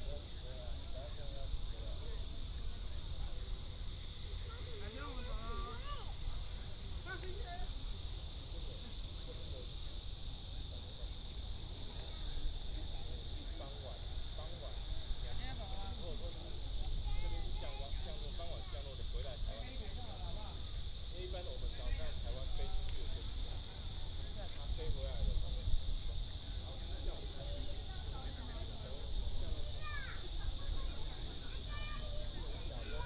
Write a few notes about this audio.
Cicadas and Birds sound, Near the airport runway, take off, Many people are watching the plane